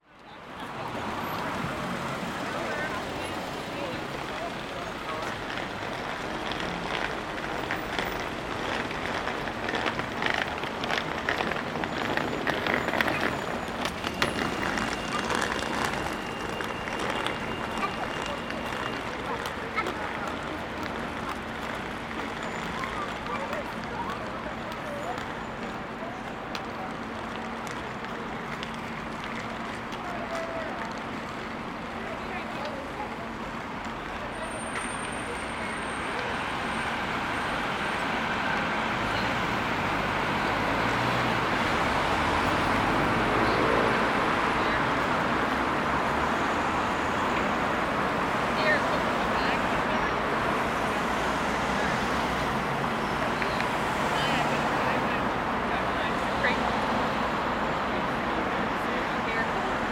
Great Northern Mall, Belfast, UK - Great Victoria Street
Recording of suitcase traveller, groups chatting, vehicles passing, taxi stalling/driving away, footsteps, pedestrian cross lights, a phone ringing in a vehicle, child talk, emergency vehicle distant siren.